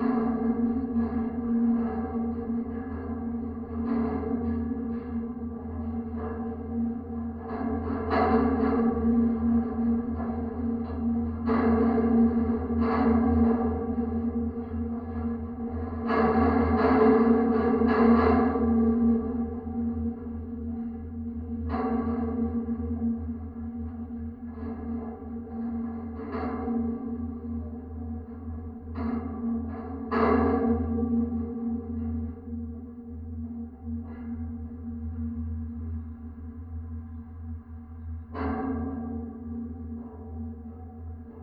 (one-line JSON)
{"title": "Athen, Piräus, Stadio - pedestrian bridge", "date": "2016-04-08 19:50:00", "description": "metal pedestrian bridge from tram to metro station, steps\n(Sony PCM D50, DIY contact mics)", "latitude": "37.94", "longitude": "23.66", "altitude": "4", "timezone": "Europe/Athens"}